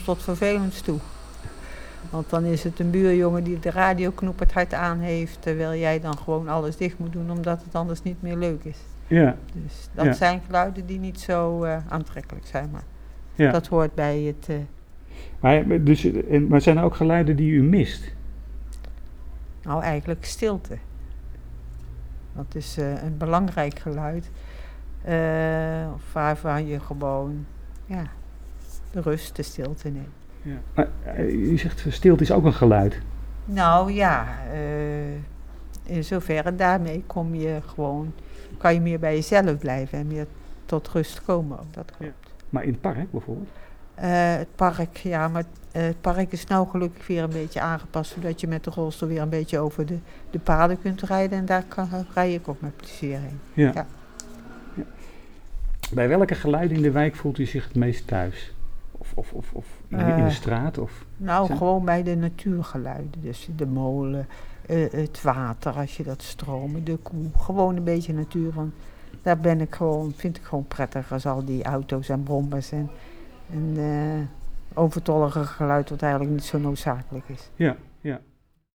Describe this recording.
Antoinette praat over de molen en de geluiden van de Stevenshof, talk with inhabitant about the sounds of the Stevenshof